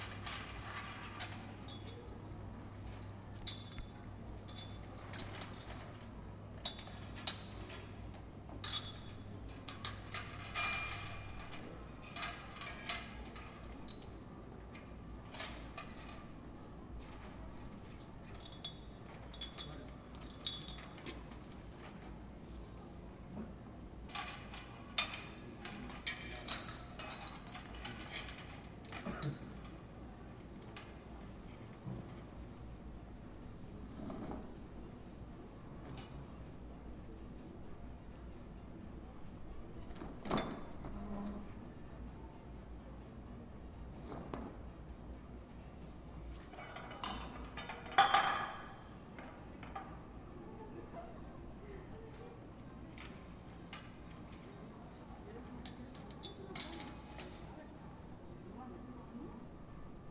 Slovenska ulica, Tyrševa ulica, Maribor, Slovenia - corners for one minute
one minute for this corner - slovenska ulica, tyrševa ulica
7 August